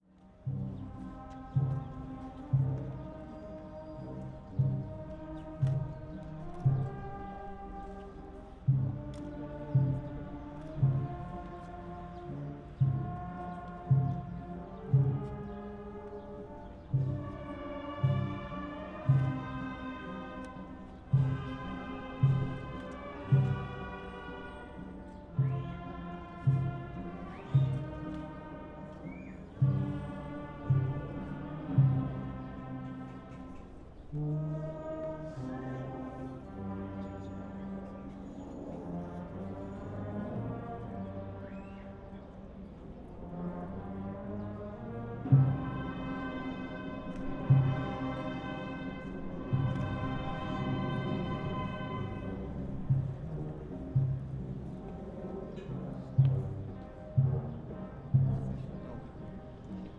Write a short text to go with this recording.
a passion play procession heard in the streets of Dingli, Malta, (SD702 AT BP4025)